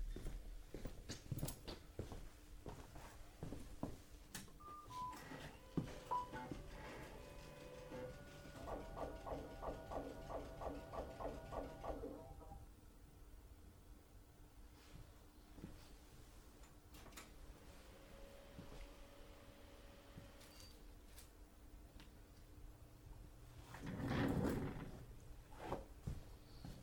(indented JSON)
{
  "title": "La Friche - Bureau / Tentative - La Friche Tentative / Orgeval, Reims",
  "date": "2012-05-18 12:06:00",
  "description": "Entrée dans le bureau",
  "latitude": "49.28",
  "longitude": "4.02",
  "altitude": "82",
  "timezone": "Europe/Paris"
}